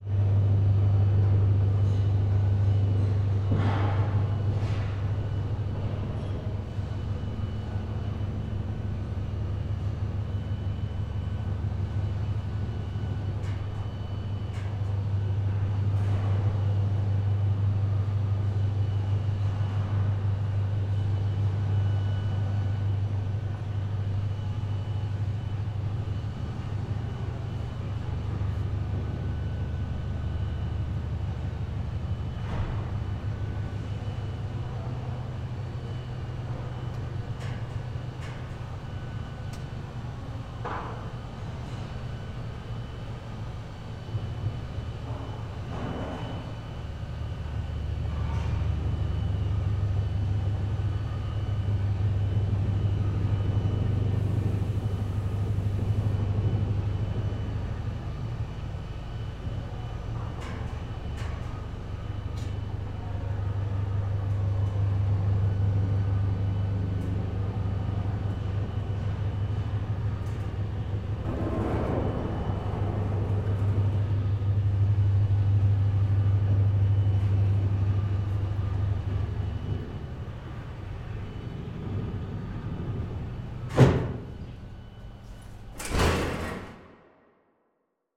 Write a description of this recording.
Sound rich lift in Bilbao industrial quarters. Recorded with Zoom H6 XY stereo mic.